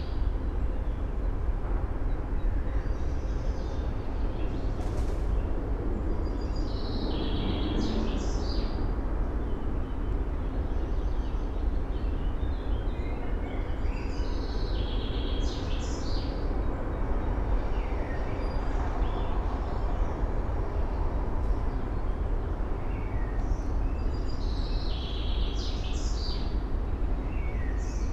Esch-sur-Alzette, Parc Muncipal, morning ambience, city noise, a Common chaffinch
(Sony PCM D50, Primo172)